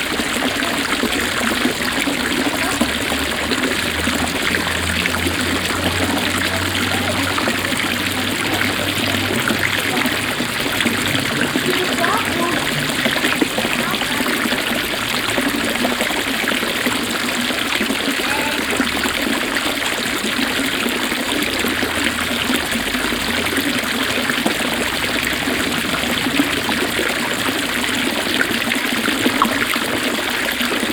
Schiltach, Deutschland - Schiltach, Market Place, Fountain
At the historical market place of the town. A warm and sunny spring evening. The sound of the water being spreaded out of 4 different water arms and two kids playing at the fountain.
soundmap d - social ambiences, water sounds and topographic feld recordings